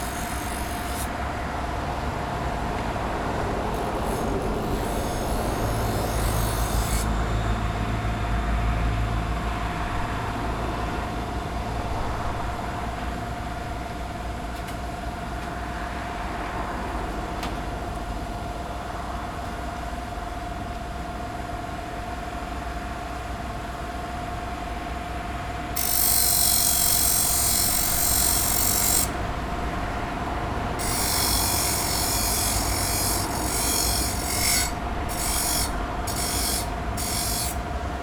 Boleslawa Chrobrego housing estate, Poznan - man sharpening knives
a man sharpening restaurant knives on an electric grinder (sony d50 internal mics)
Osiedle Bolesława Chrobrego, Poznań, Poland, 24 August, 17:29